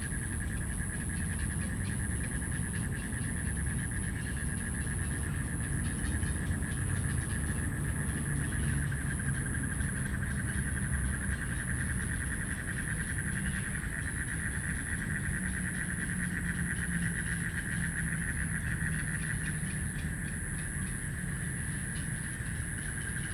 大安森林公園, Taipei City, Taiwan - Frogs chirping
in the Park, Bird calls, Frogs chirping
Zoom H2n MS+XY